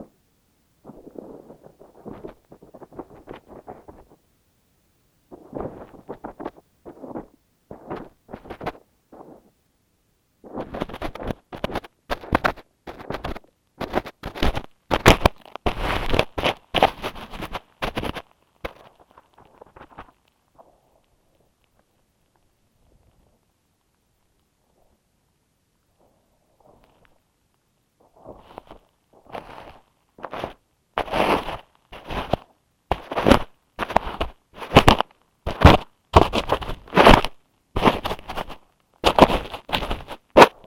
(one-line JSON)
{"title": "Bourguignons, France - Mole digging", "date": "2017-08-02 09:30:00", "description": "This strange recording is simply a mole digging a tunnel. I saw a mound moving, so walking very cautiously, I put a contact microphone into the mound... and I heard it was working. Great ! As this, you can hear it digging (very deaf small sound) and after pushing the clay outside (noisy clay movements). And again and again and again. At the end of the recording, the microphone made a jump into the mound, collapsing !", "latitude": "48.14", "longitude": "4.33", "altitude": "141", "timezone": "Europe/Paris"}